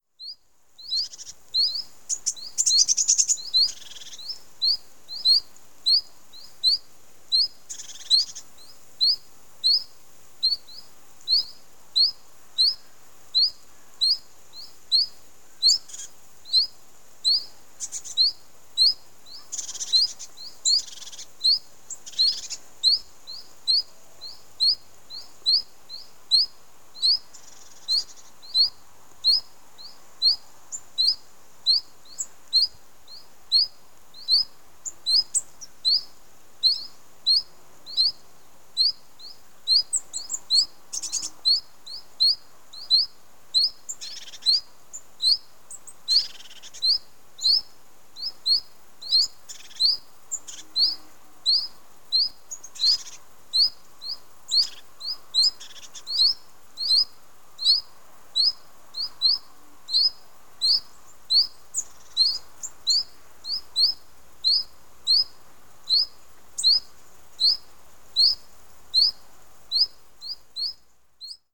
WLD Montgomeryshire Canal Birdsong
WLD, World Listening Day, Montgomershire Canal, Birdsong